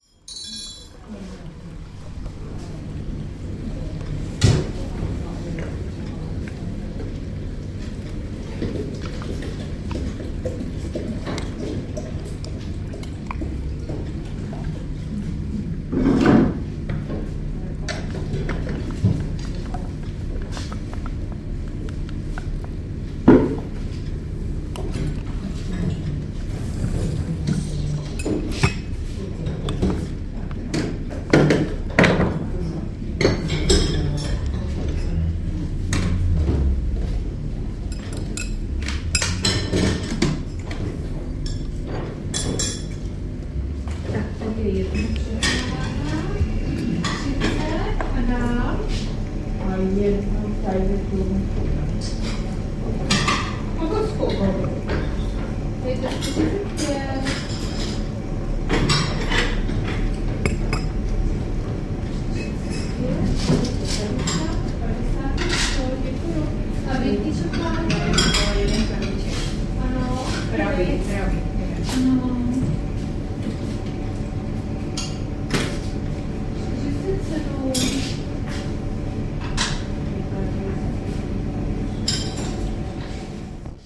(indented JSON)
{
  "title": "vitezna street patisserie",
  "date": "2010-11-27 13:32:00",
  "description": "Old patisserie na ÚjezdÄ›, almost the only shop at Malá Strana district, which reminds the past times.",
  "latitude": "50.08",
  "longitude": "14.41",
  "altitude": "204",
  "timezone": "Europe/Prague"
}